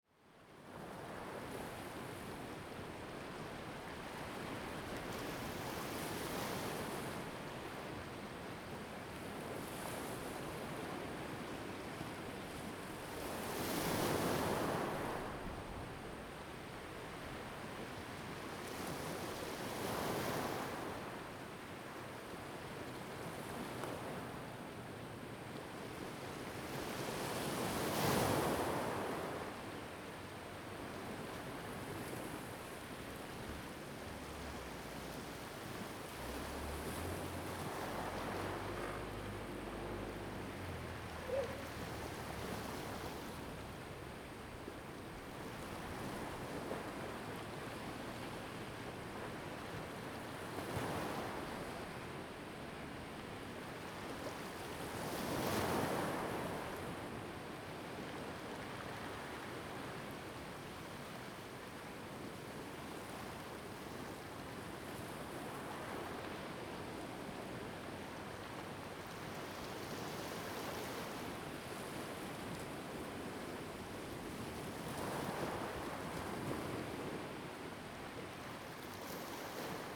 椰油村, Koto island - sound of the waves
At the beach, sound of the waves
Zoom H2n MS +XY
29 October, 21:32